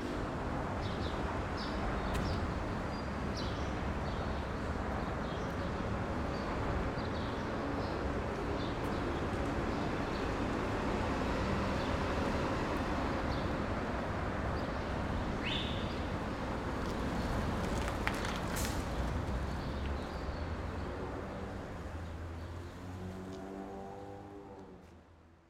Fdr Drive Service Rd E, New York, NY, USA - East River Greenway
Walking from Stuyvesant Cove to East River Greenway. Walking over grains of deicing salts.
Sounds of people enjoying the park with personal speakers.